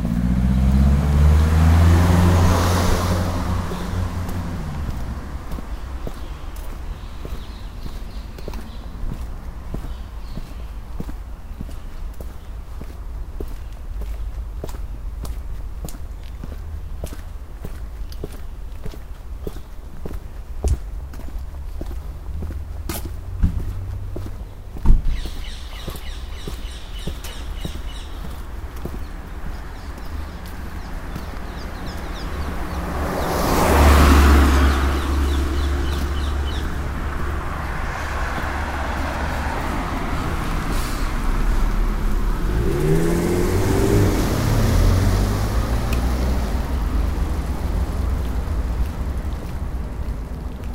cologne, spichernstrasse, verkehr, morgens
stereofeldaufnahmen im september 07- morgens
project: klang raum garten/ sound in public spaces - in & outdoor nearfield recordings